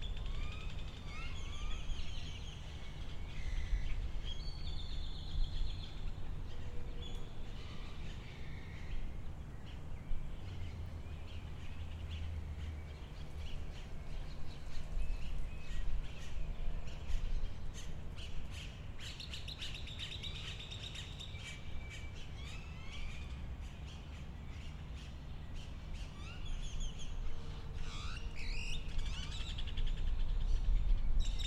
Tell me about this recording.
Lago del Bosque de Chapultepec. Lunes.